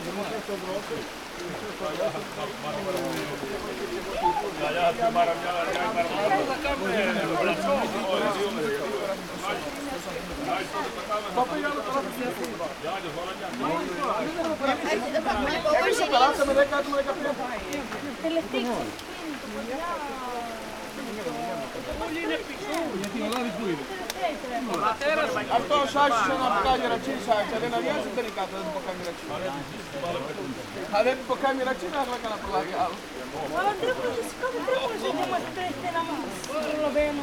Crete, Samaria Gorge - hikers
hikers resting by a mountain stream